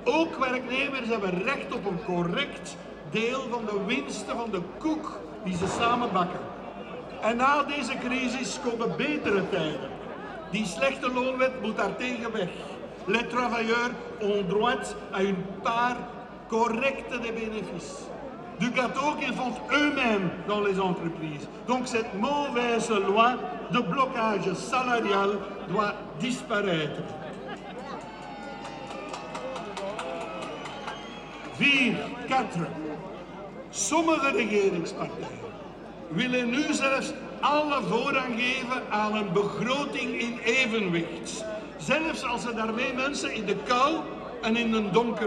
Place de la Monnaie, Bruxelles, Belgique - Speeches at the demonstration.
Discours lors de la manifestation syndicale pour le pouvoir d’achat.
Speeches at the trade union demonstration for purchasing power.
Tech Note : Sony PCM-M10 internal microphones.